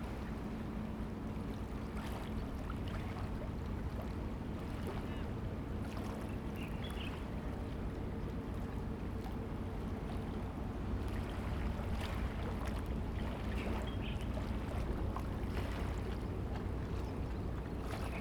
興海漁港, Manzhou Township - Pier area at fishing port
Pier area at fishing port, birds sound, Pier area at fishing port, Fishing boat returns to the dock, tide
Zoom H2n MS+XY
Hengchun Township, 台26線8號, 23 April